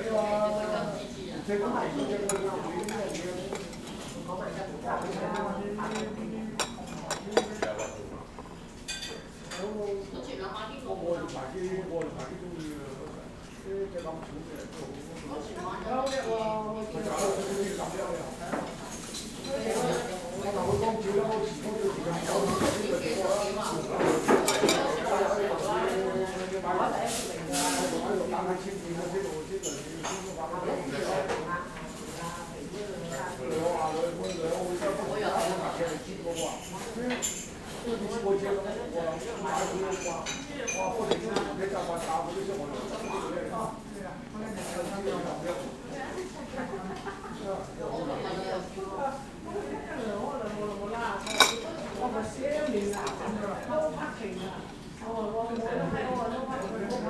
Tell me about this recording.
Chinese Restaurant Ambience in Chinatown/Little Italy. Sounds of restaurant crew cutting vegetables and clients chatting. Zoom H6